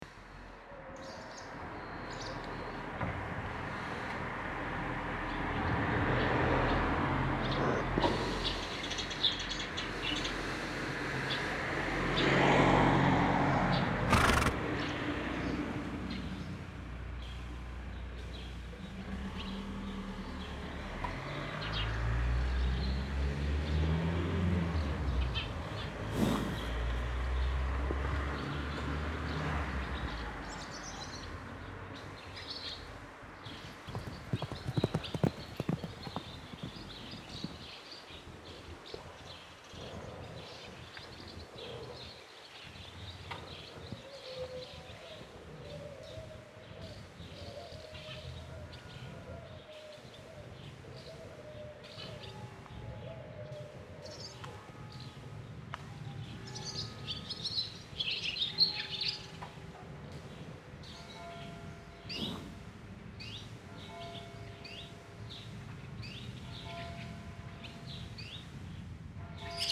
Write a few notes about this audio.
Aufgenommen an einem Sommerabend. Der Klang des Schnaubens, Atmens und Laufen eines Pferdes auf einer Pferdekoppel nahe der Hauptstraße. Im Hintergrund die abendlichen Kirchglocken und die Vorbeifahrt eines Busses. Recorded on a summer evening. The sound of a horse breathing and running in a paddock close to the town's main street. In the background a church bell and a bus passing by.